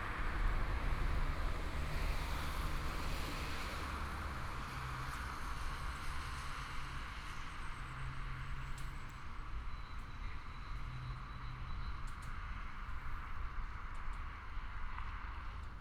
Bavariaring, Ludwigsvorstadt-Isarvorstadt - on the road
Walking on the road, Bells, Traffic Sound, The sound of traffic lights
Munich, Germany, 11 May 2014